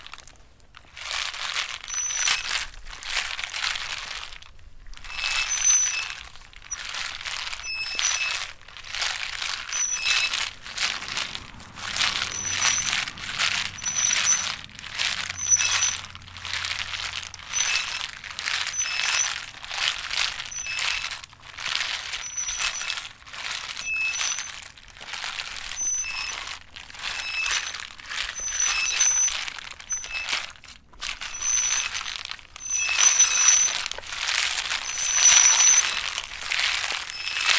Espace culturel Assens, Nussernte auf französisch
Espace culturel Assens, Nüsse Nusserste, der Musiksound ist zeitgenössisch, die Erfindung ist typisch französisch
Assens, Switzerland, 28 October 2011, 14:29